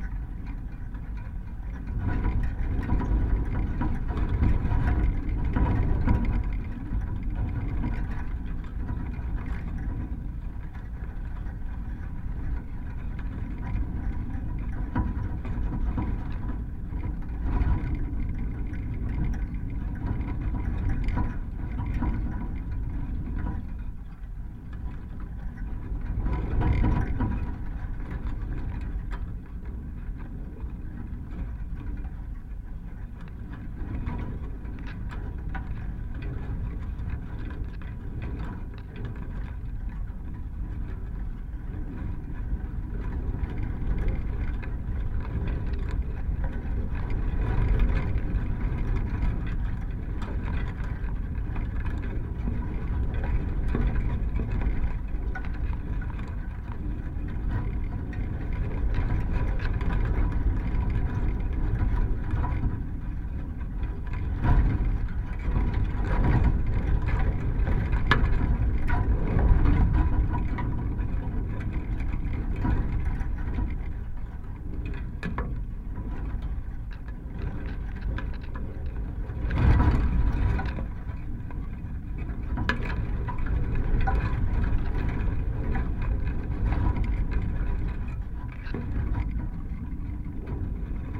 Easton Woods meet Covehithe Beach, Suffolk, UK - fallen tree
tree, slipped off the edge of the cliff above, horizontal on the sand, stripped of its bark by the relentless erosion of the sea, bound by metal chainlink fencing wrenched from its posts on the way down, decorated with dried seaweed, plastic shreds, detritus washed and caught in its tangled roots.
Stereo pair Jez Riley French contact microphones + SoundDevicesMixPre3
9 May 2022, ~18:00